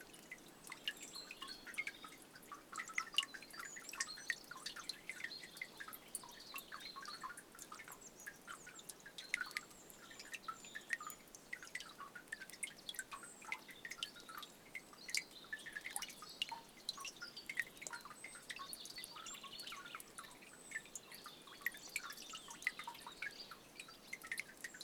Lithuania, Jasonys, the last melting ice - the last melting ice
the last melting ice on the frozen streamlet
11 April 2012, 14:15